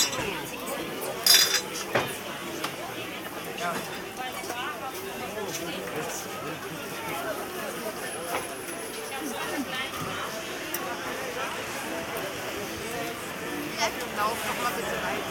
Potsdamer Platz, Berlin, Allemagne - Weihnachtsmarkt
Walking Postdamer Platz and visiting Christmas market with music and children gliding artificial slope on inner tubes (Roland R-07 + CS-10EM)
Deutschland, 25 December 2021, ~16:00